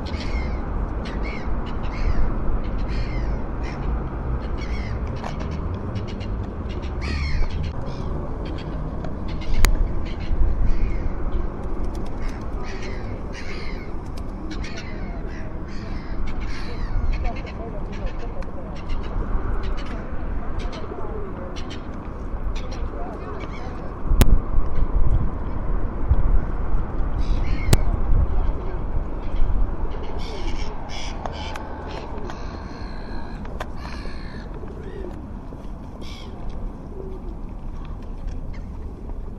Gull at baltijaam
usual tounbirds at Tallinn are the seagulls
20 April, ~13:00